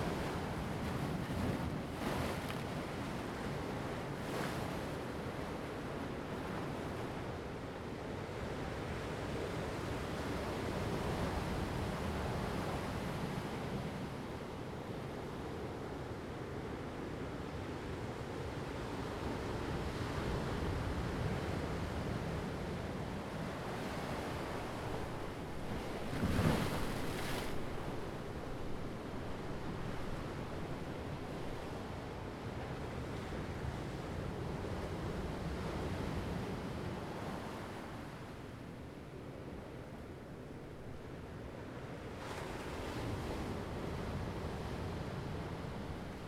Annestown, Co. Waterford, Ireland - Waves by concrete platform
There are three large steps down to the beach here, periodically the rough waves can be heard slapping over the lowest two.
30 November, 17:02